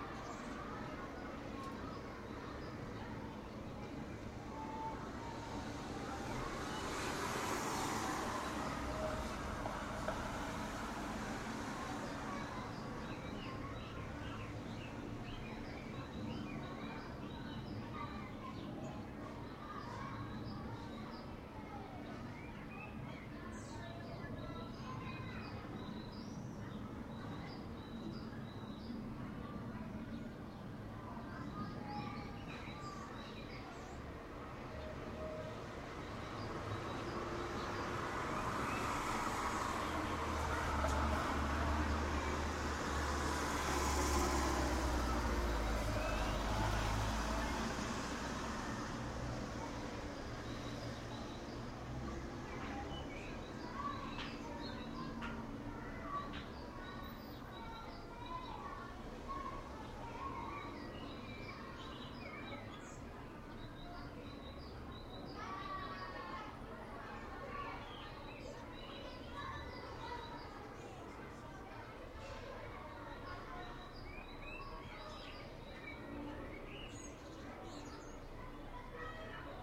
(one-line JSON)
{"title": "Katamon, Jerusalem, Israel - School and birds", "date": "2015-03-11 13:00:00", "description": "Elementary school recess, passing traffic, overhead jet, birds (swifts, sparrows, and blackbirds among others)", "latitude": "31.77", "longitude": "35.21", "altitude": "754", "timezone": "Asia/Jerusalem"}